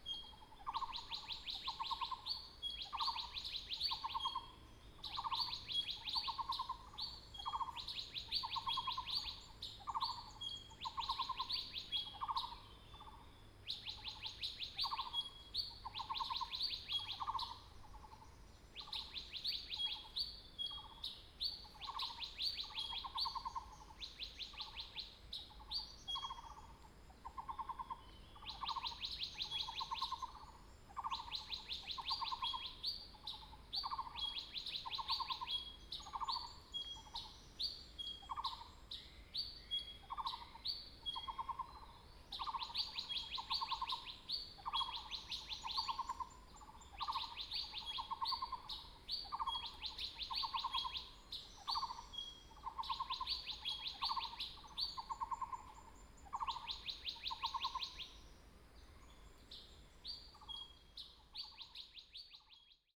水上, Puli Township, Nantou County - Birds singing
Birds singing, Next to the woods
26 April 2016, ~05:00